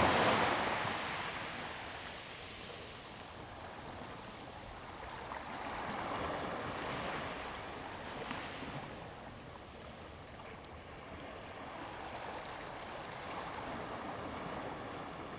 龍門港, Koto island - Small pier
Small pier, Sound of the waves
Zoom H2n MS +XY